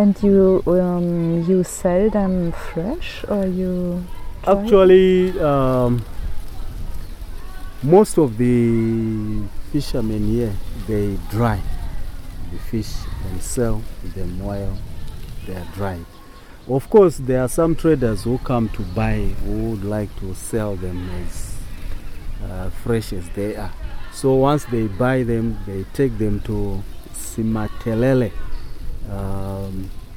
Sebungwe Primary School, Binga, Zimbabwe - People in this area depend on fish...
Mr Munenge, the headmaster of Sebungwe River Mouth Primary School describes the area of Simatelele Ward where the school is located and some of the local characteristics and challenges.